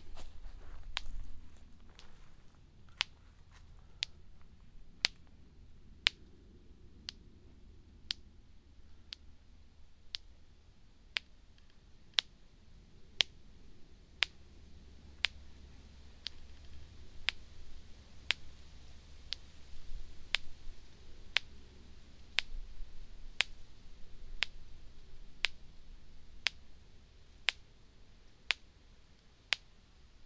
a small electrocution of a tree - KODAMA document
electric sparks between an electric fence and a tree in two different locations.
recorded during the KODAMA residency at La Pommerie September 2009.
Saint-Setiers, France, 20 October 2009, ~14:00